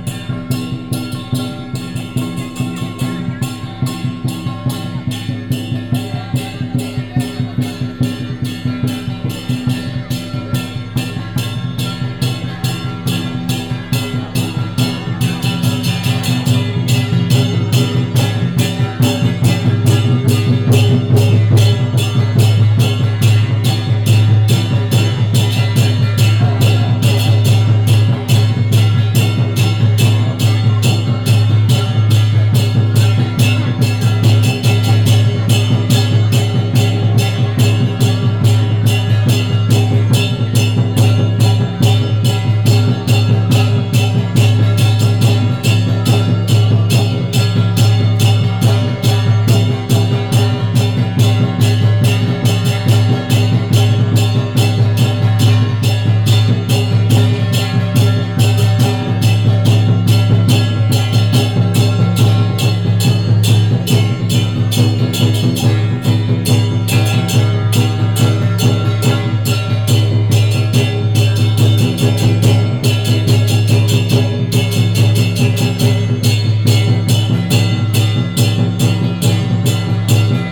In a small temple, Binaural recordings, Sony PCM D100+ Soundman OKM II
24 September 2017, ~20:00